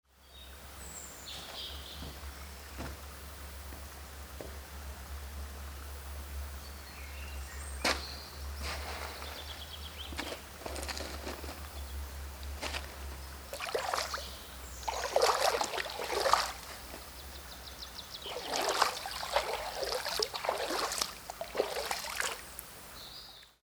{
  "title": "Zakalj, Rijeka, smal lake",
  "date": "2003-05-11 11:29:00",
  "description": "Playing with water on small lake (sample from Tales from Rjecina, music track - Transponder-records, Rijeka, Croatia)\nrec setup: X/Y Sennheiser mics via Marantz professional solid state recorder PMD660 @ 48000KHz, 16Bit",
  "latitude": "45.34",
  "longitude": "14.46",
  "altitude": "68",
  "timezone": "Europe/Zagreb"
}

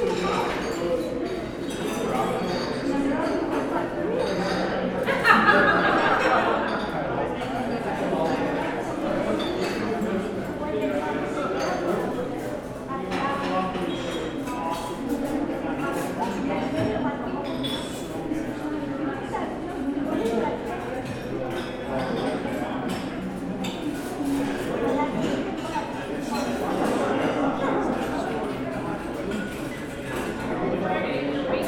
{
  "title": "neoscenes: lunch at the Bakery",
  "date": "2010-04-12 14:07:00",
  "latitude": "40.76",
  "longitude": "-111.88",
  "altitude": "1305",
  "timezone": "US/Mountain"
}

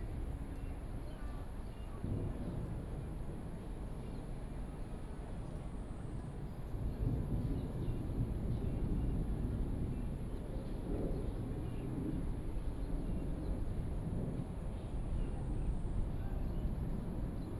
{
  "title": "Bitan Rd., Xindian Dist., New Taipei City - Thunder sound",
  "date": "2015-07-28 15:20:00",
  "description": "Bird calls, Thunder, Traffic Sound",
  "latitude": "24.96",
  "longitude": "121.54",
  "altitude": "32",
  "timezone": "Asia/Taipei"
}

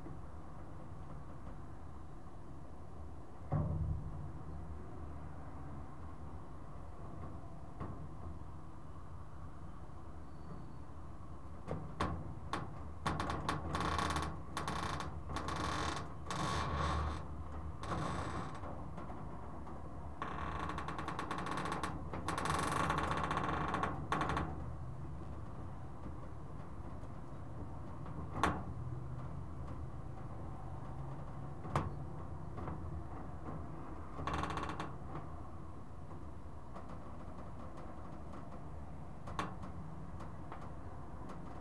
{
  "title": "Yves Brunaud, Toulouse, France - metalic vibration 06",
  "date": "2022-04-12 12:10:00",
  "description": "metal palisade moving by the action of the wind\nCaptation ZOOM H4n",
  "latitude": "43.62",
  "longitude": "1.47",
  "altitude": "165",
  "timezone": "Europe/Paris"
}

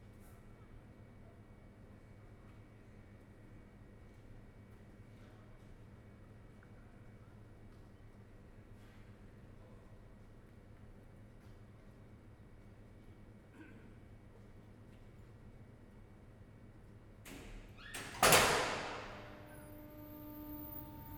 {
  "title": "Praha, Petřín funicular",
  "date": "2011-06-22 11:30:00",
  "description": "Petřín funicular\nengine room",
  "latitude": "50.08",
  "longitude": "14.40",
  "altitude": "326",
  "timezone": "Europe/Prague"
}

